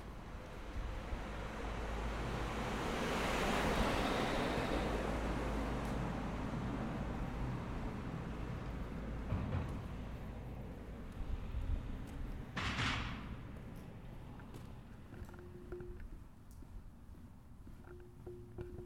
Calle Freud, Madrid, España - The car tunnel sounds
This recording shows all sounds you can here in the inside of the tunnel. These sounds are affected by the particular acoustic of the place.
You can hear:
- Passing cars
- Sewer cover that sounds when cars pass over it
- Sound of echo and reverb
- Another sewer sound far away
- Passing motorbike
- Cars passing over speed bumps
Gear:
Zoom h4n
- Cristina Ortiz Casillas
- Daniel Daguerre León
- Carlos Segura García